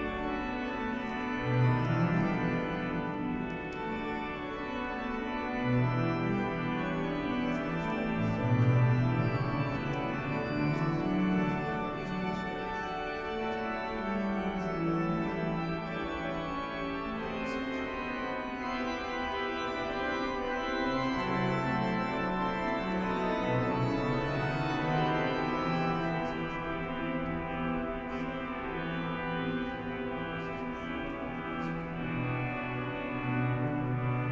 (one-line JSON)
{"title": "Monasterio de Leyre, Navarra, España - Organ test", "date": "2015-08-04 13:00:00", "description": "Pruebas de sonido del órgano del Monasterio de Leyre. Grabación binaural", "latitude": "42.64", "longitude": "-1.17", "altitude": "769", "timezone": "Europe/Madrid"}